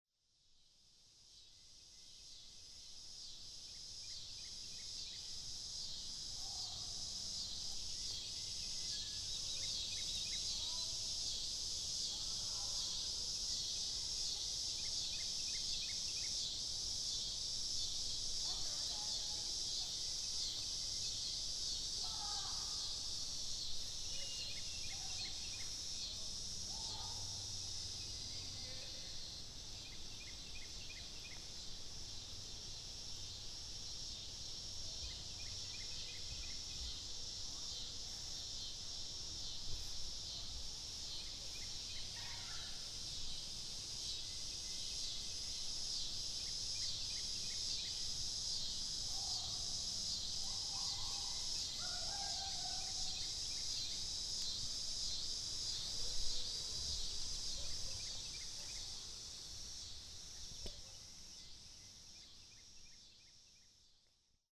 Disc Golf Course, Mississinewa Lake State Recreation Area, Peru, IN, USA - Cicadas at Mississinewa Lake
Sounds heard at the disc golf course, Mississinewa Lake State Recreation Area, Peru, IN 46970, USA. Part of an Indiana Arts in the Parks Soundscape workshop sponsored by the Indiana Arts Commission and the Indiana Department of Natural Resources. #WLD 2020
Indiana, United States of America